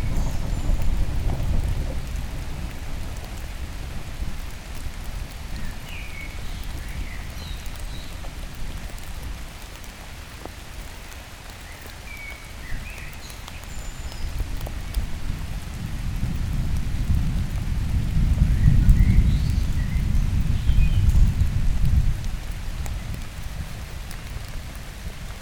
It's raining since a long time. It's a small storm. Trees trickle on the ivy. It's a quiet place, the road is so bad (very old cobblestones) that nobody's passing by there. And rain fall, fall and fall again !
Mont-Saint-Guibert, Belgium, 27 May 2016